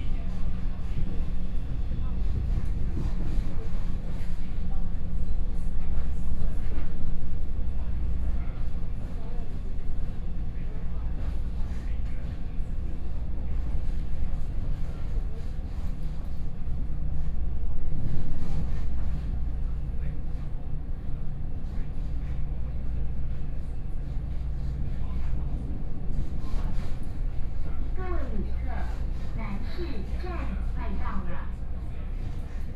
from Miaoli Station to Tongluo Station, Zoom H4n+ Soundman OKM II